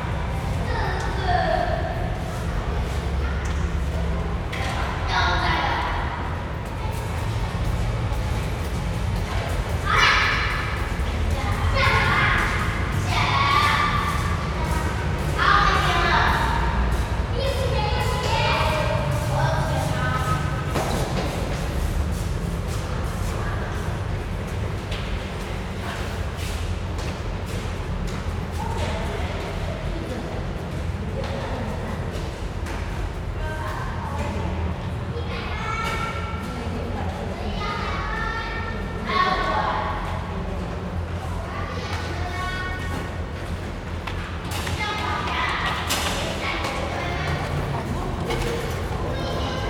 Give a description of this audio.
In the underground passage, the railway tracks, Zoom H4n XY+Rode NT4